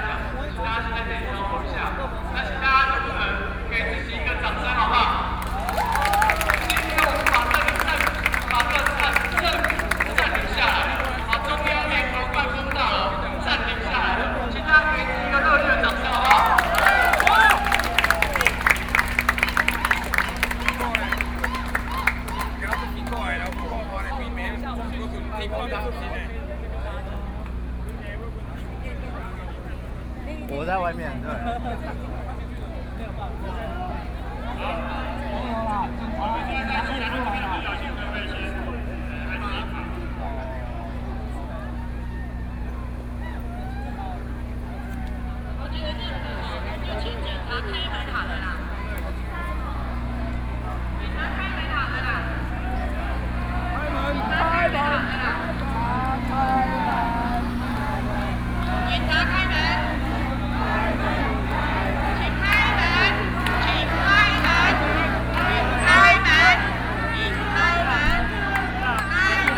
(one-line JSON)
{"title": "Jinan Rd, Taipei City - Nonviolent occupation", "date": "2013-08-18 22:42:00", "description": "Nonviolent occupation, To protest the government's dereliction of duty and destruction of human rights, Zoom H4n+ Soundman OKM II", "latitude": "25.04", "longitude": "121.52", "altitude": "11", "timezone": "Asia/Taipei"}